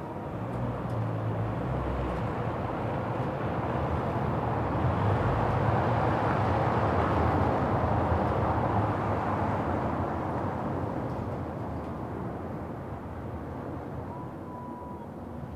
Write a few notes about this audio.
Clapping was less today. But the quietness of the street is remarkable. PCM D100 from the balcony.